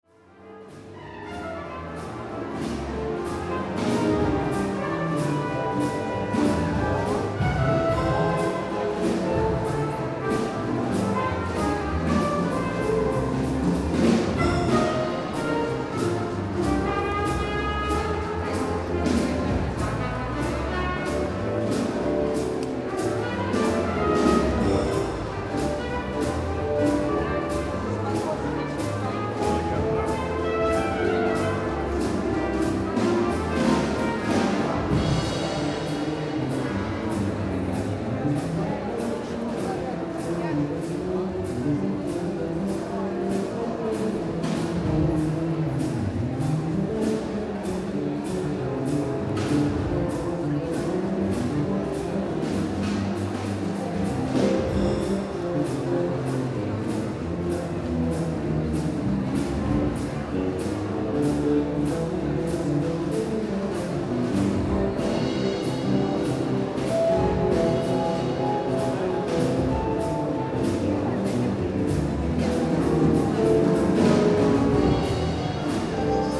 Vilnius, Shinkarenko jazz band
Shinkarenko jazz band at the international Vilnius Art11 fair opening